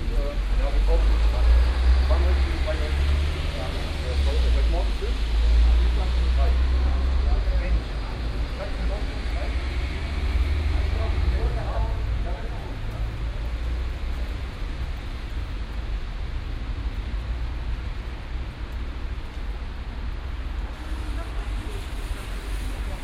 {"title": "Löhrrondell, Löhrcenter, Koblenz, Deutschland - Löhrrondell 7", "date": "2017-05-19 15:00:00", "description": "Binaural recording of the square. Seventh of several recordings to describe the square acoustically. People on the phone, in front of a shop window next to a shopping mall. Rainy day, car sounds.", "latitude": "50.36", "longitude": "7.59", "altitude": "76", "timezone": "Europe/Berlin"}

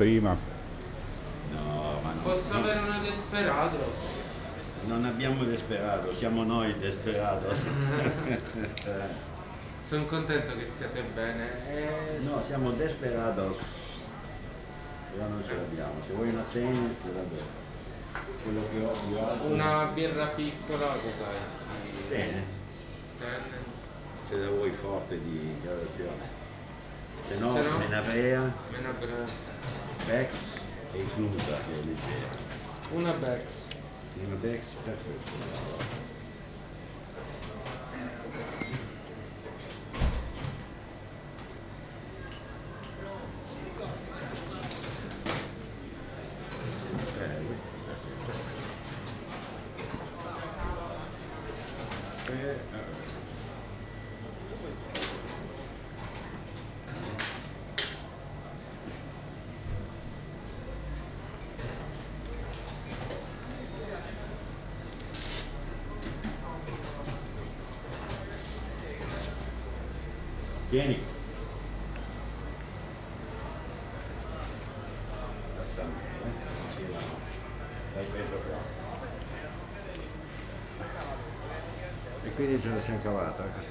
{"title": "Ascolto il tuo cuore, città. I listen to your heart, city. Chapter LXXXV - Night walk et Bibe Ron in the days of COVID19 Soundwalk", "date": "2020-05-23 22:38:00", "description": "\"Night walk et Bibe Ron in the days of COVID19\" Soundwalk\"\nChapter LXXXV of Ascolto il tuo cuore, città. I listen to your heart, city\nSaturday, May 23th 2020. Night walk and drinking a rum at Bibe Ron, re-opend as many others local in the movida district of San Salvario, Turin. Seventy-four days after (but day twenty on of Phase II and day seven of Phase IIB ad day 1 of Phase IIC) of emergency disposition due to the epidemic of COVID19.\nStart at 10:38 p.m. end at 11:27 p.m. duration of recording 49’26”\nThe entire path is associated with a synchronized GPS track recorded in the (kmz, kml, gpx) files downloadable here:", "latitude": "45.06", "longitude": "7.68", "altitude": "247", "timezone": "Europe/Rome"}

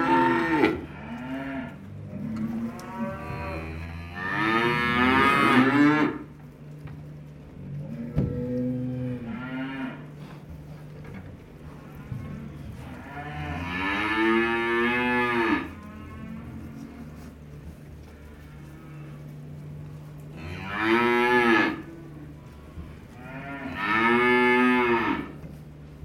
{"title": "Court-St.-Étienne, Belgique - Cow crisis", "date": "2015-11-27 16:15:00", "description": "Veals are separated from cows. This makes a mega cow crisis. A veal is crying so much that it losts voice. This makes a monstruous bear sound, grouar ! Thanks to Didier Ryckbosch welcoming me in the farm.", "latitude": "50.65", "longitude": "4.60", "altitude": "111", "timezone": "Europe/Brussels"}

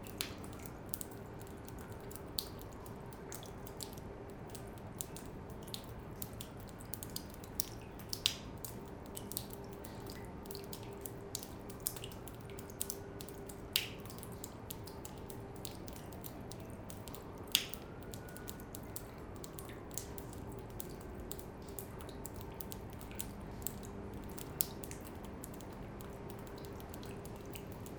{"title": "Charleroi, Belgium - Rain in an abandoned factory", "date": "2017-10-07 12:15:00", "description": "Into an abandoned factory, its smelling very bad the ammonia and benzol products. Its raining, landscape is very sad. Far away a siren reverberates.", "latitude": "50.41", "longitude": "4.41", "altitude": "102", "timezone": "Europe/Brussels"}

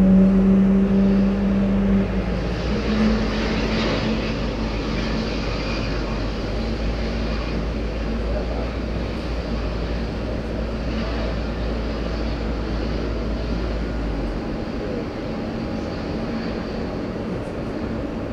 {"title": "Smoking Area", "date": "2009-09-03 13:39:00", "description": "Aéroport dOrly - Paris\nAttente dans la zone fumeur avant le départ pour Berlin", "latitude": "48.73", "longitude": "2.37", "altitude": "90", "timezone": "Europe/Paris"}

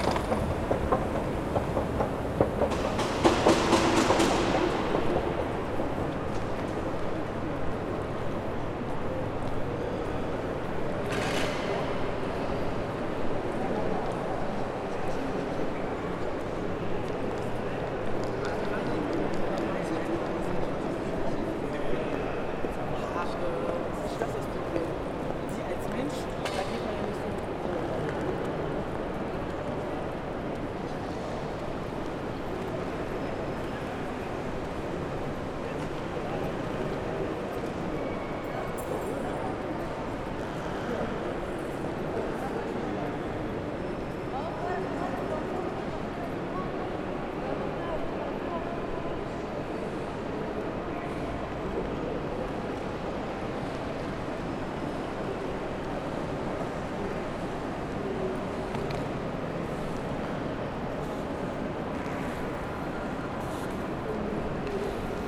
{"title": "Frankfurt Hauptbahnhof 1 - Halle", "date": "2020-03-21 12:07:00", "description": "This is the first of a series of recordings that document the change of sound in the station during the so called 'Corona Crisis'. It is unclear if it will become audible that there is less noise, less voices than normal. But at least it is a try to document this very special situation. This recording starts on the B-level, where drugs are dealt, the microphone walks to an escalator to the entrance hall. Voices, suitcases, birds.", "latitude": "50.11", "longitude": "8.66", "altitude": "110", "timezone": "Europe/Berlin"}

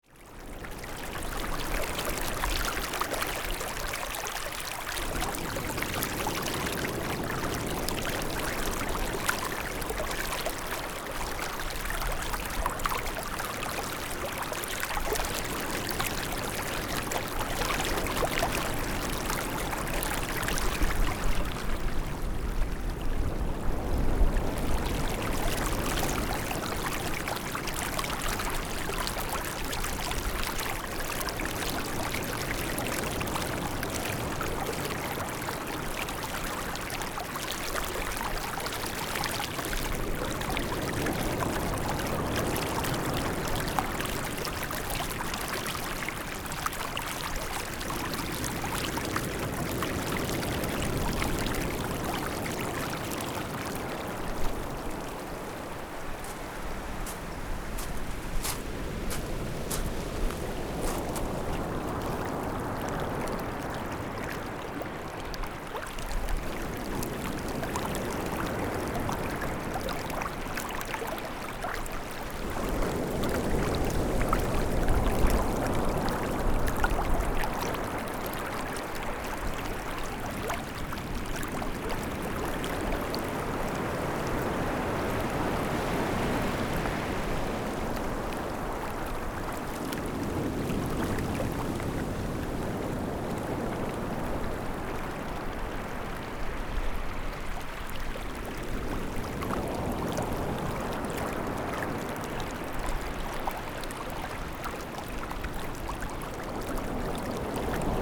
{"title": "Zhiben, Taitung City - The sound of water", "date": "2014-01-17 14:07:00", "description": "Sound of the waves, The sound of water, Zoom H6 M/S", "latitude": "22.69", "longitude": "121.07", "timezone": "Asia/Taipei"}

